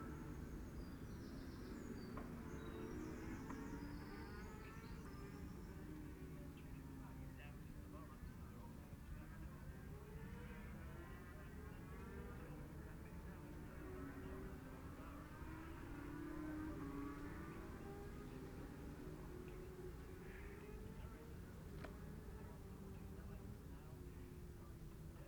Scarborough UK - Scarborough Road Races 2017 ...
Cock o' the North Road Races ... Oliver's Mount ... Senior motorcycle practice ...
24 June 2017, 10:15